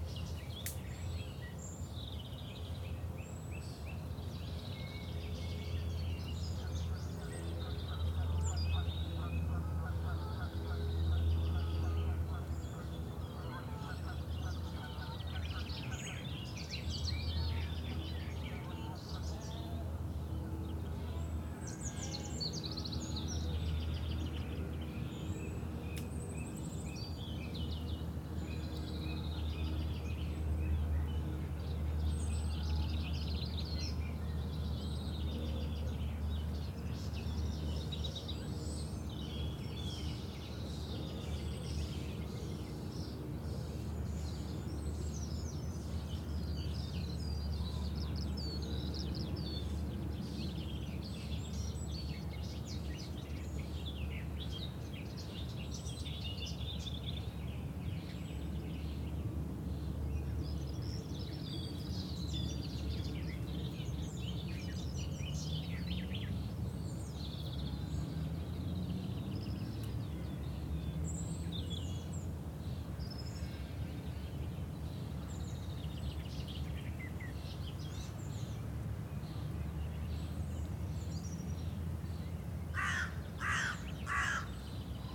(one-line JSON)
{"title": "Sheep field, Rushall farm, Bradfield, UK - Ewes and lambs together in the field", "date": "2017-05-01 08:43:00", "description": "This is the beautiful sound of a field of sheep, first thing in the morning. There are ewes and lambs together, and many birds in the woodland area beside them. At 9am, it's beautifully peaceful here and you can hear the skylarks who live on this organic farm in harmony with their sheep buddies.", "latitude": "51.45", "longitude": "-1.16", "altitude": "87", "timezone": "Europe/London"}